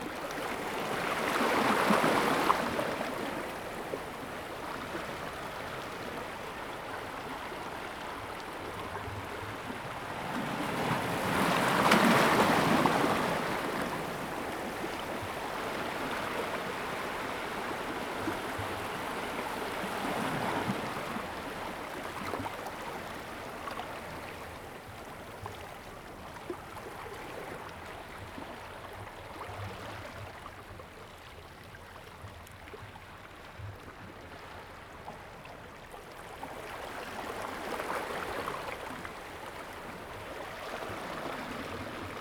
石雨傘漁港, Chenggong Township - sound of the waves
Small fishing port, Birdsong, Sound of the waves
Zoom H2n MS +XY